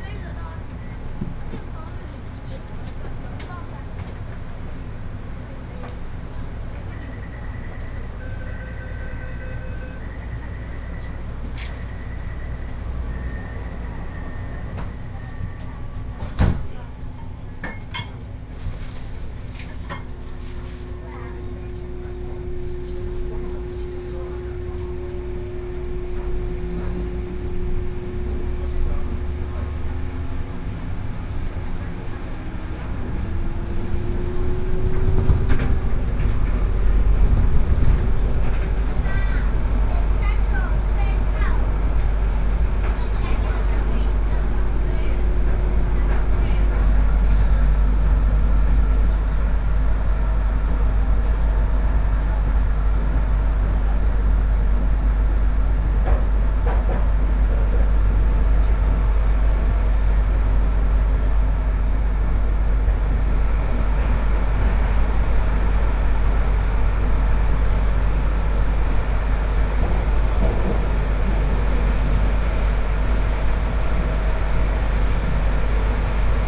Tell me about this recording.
Neihu Line (Taipei Metro) from Xihu Station to Dazhi Station, Sony PCM D50 + Soundman OKM II